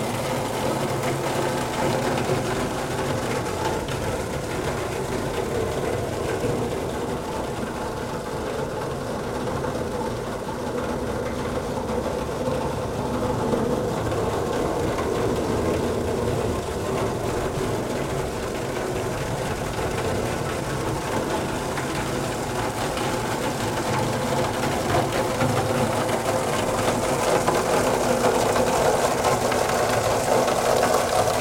Nova Gorica, Slovenija - Žleb za Bevkovim trgom
The rain gutters furious vomit.